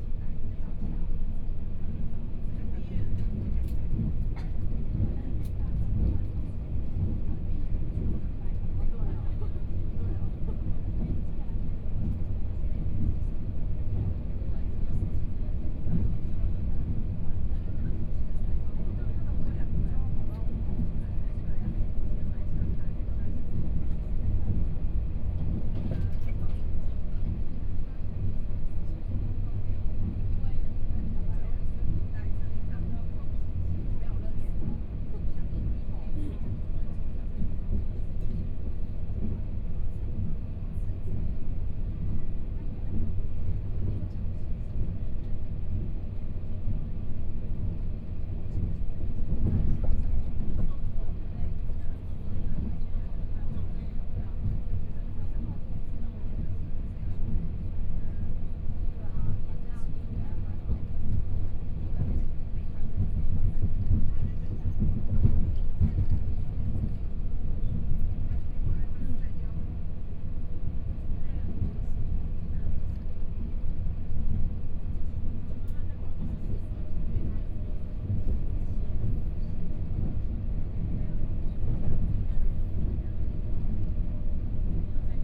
Luye Township, Taitung County - Taroko Express
Interior of the train, from Shanli Station to Ruiyuan Station, Binaural recordings, Zoom H4n+ Soundman OKM II
Luye Township, Taitung County, Taiwan, 18 January 2014